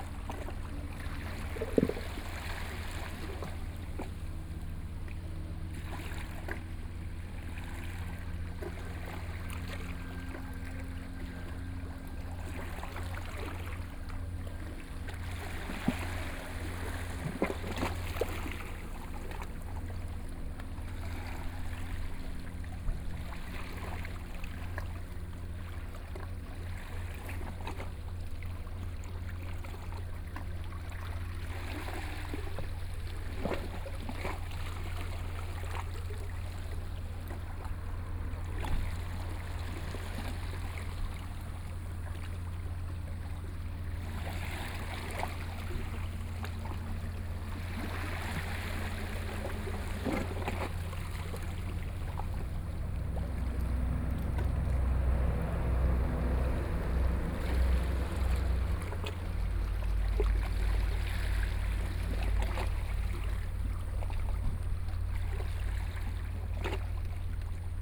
瑞芳區鼻頭里, New Taipei City - sound of the waves
Small village, Sound of the waves, Traffic Sound
New Taipei City, Taiwan, 29 July 2014, ~20:00